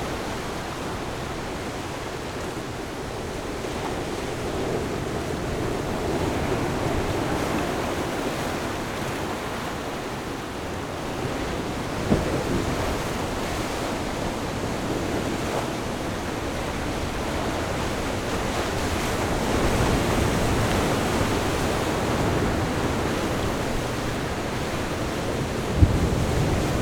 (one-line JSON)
{"title": "Jizazalay, Ponso no Tao - sound of the waves", "date": "2014-10-29 11:49:00", "description": "sound of the waves\nZoom H6 + Rode NT4", "latitude": "22.08", "longitude": "121.54", "altitude": "18", "timezone": "Asia/Taipei"}